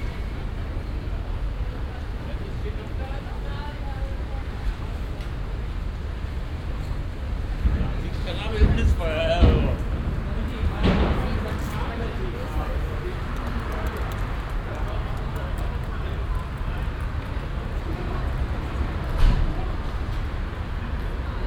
cologne, altstadtufer, unter deutzer brücke
unter der deutzer bücke, mittags, fahrzeuge und strassenbahnüberfahrt
soundmap nrw: social ambiences/ listen to the people - in & outdoor nearfield recordings
29 December 2008, 17:02